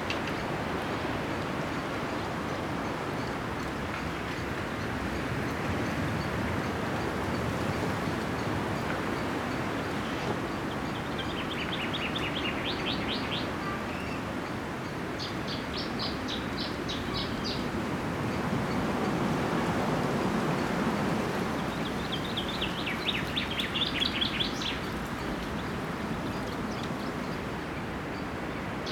Tandel, Luxemburg - Tandel, cow meadow at a hill
An einem leicht windigem Sommertag an einer Kuhweide. Das Geräusch des Windes, der sich in Wellen den Hügel hinaufbewegt, Vogelstimmen, das Schnauben einer Kuh und die Quietschgeräusche eines Holzgatters.
At a cow meadow on mild windy summer day. The sound of the wind coming uphill in waves, a birds voice, the snorring of a cow and the squeaking of a fence.
Tandel, Luxembourg, 7 August 2012, 14:20